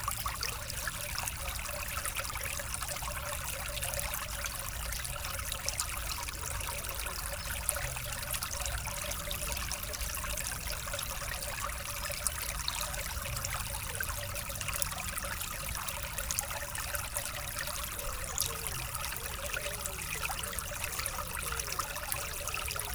Court-St.-Étienne, Belgique - Ry de Beaurieux river
The Ry de Beaurieux is a small stream flowing behind the houses. Access to this river is difficult.